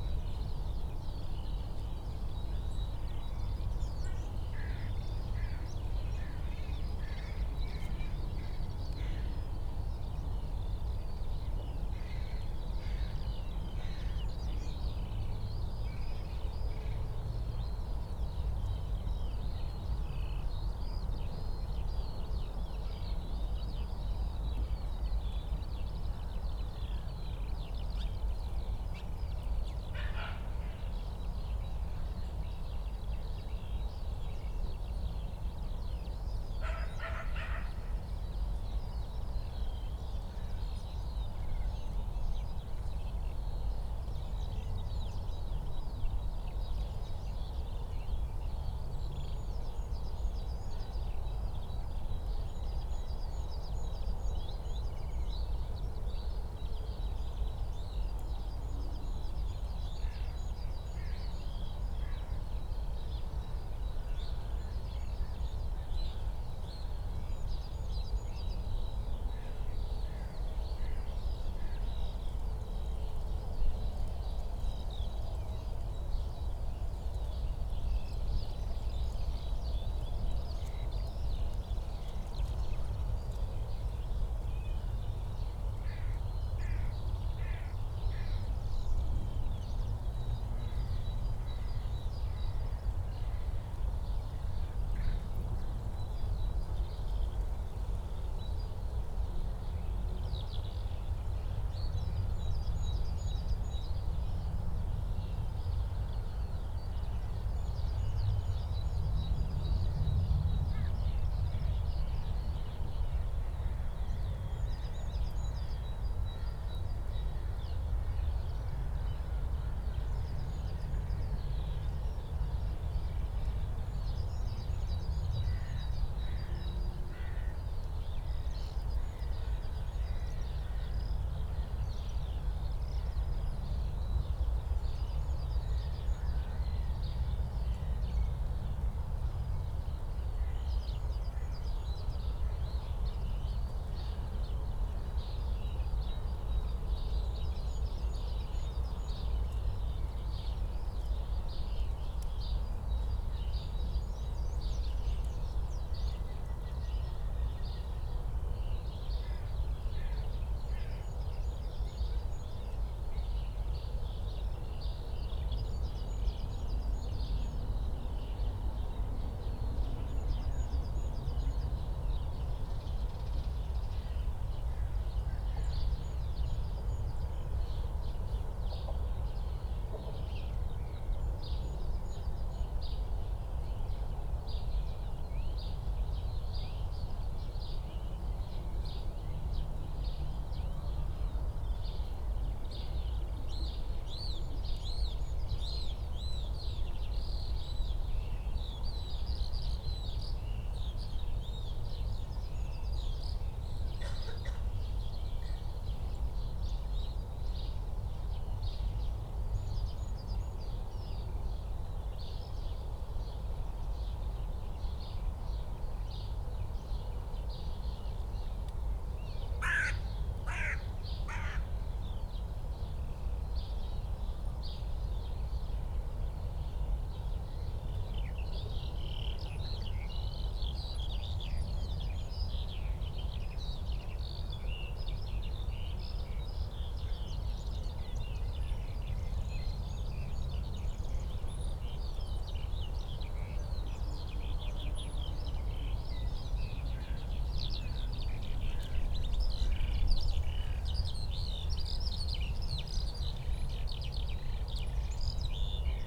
Tempelhofer Feld, Berlin, Deutschland - spring morning ambience
place revisited, warm spring morning, few people, many birds
(Sony PCM D50, DPA4060)